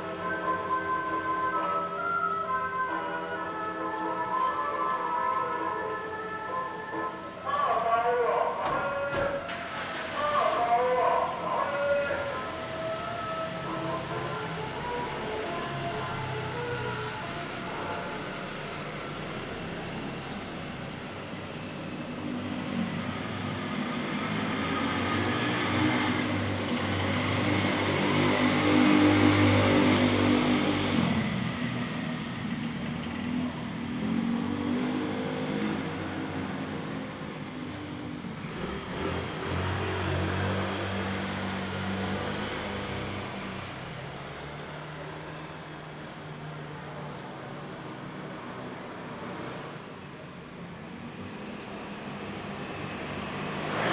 大仁街, Tamsui District, New Taipei City - Vendors Publicity
Old street, Traffic Sound, Vendors Publicity
Zoom H2n MS+XY
New Taipei City, Taiwan, 12 March 2016, 21:30